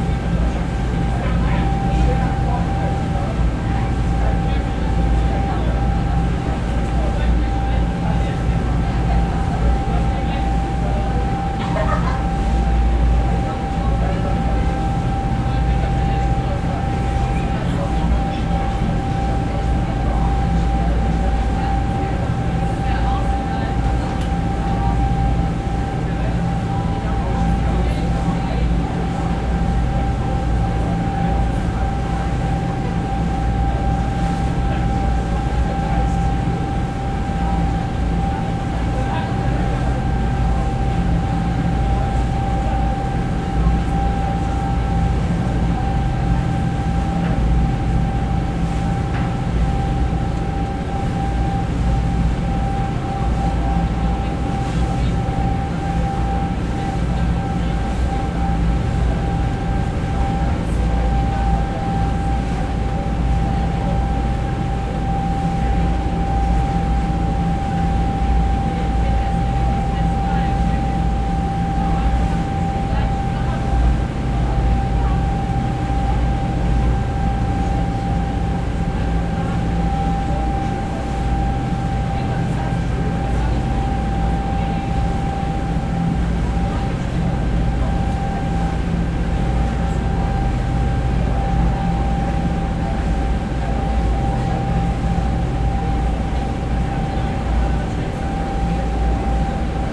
Welcom on board
Captation ZOOM H6
France, 28 July 2022